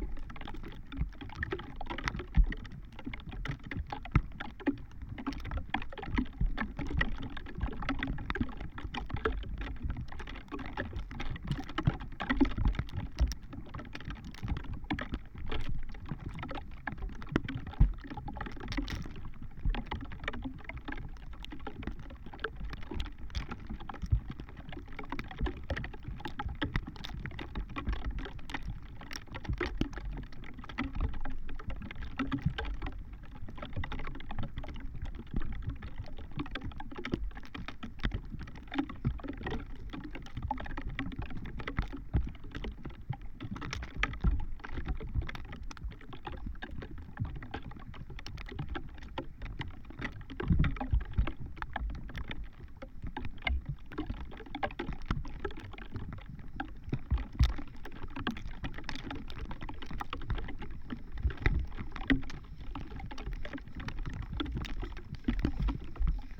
first snowflakes falling on a single dried reed. contact microphones